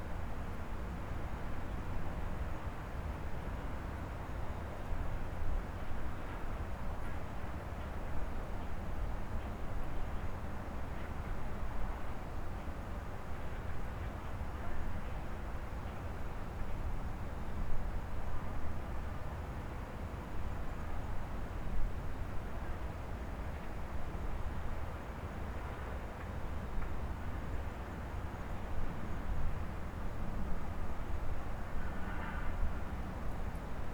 Castle Peak, Tuen Mun, Hong Kong - Castle Peak
Castle Peak is 583m high, located in Tuen Mun, Western part of New Territories. It is one of the "Three Sharp Peaks of Hong Kong", together with Sharp Peak and High Junk Peak. A television broadcasting principal transmitting station can be found at its peak. You can hear the traffic sounds far away from the soundless peak.
青山海拔583米高，位於香港新界西部屯門區，與釣魚翁山和蚺蛇尖合稱「香港三尖」。其主峰頂有香港數碼地面電視廣播兼模擬電視廣播發射站。在山頂的無聲，使你能聽到遠處公路的聲音。
#Cricket, #Construction, #Traffic
香港 Hong Kong, China 中国, 30 January 2019, ~13:00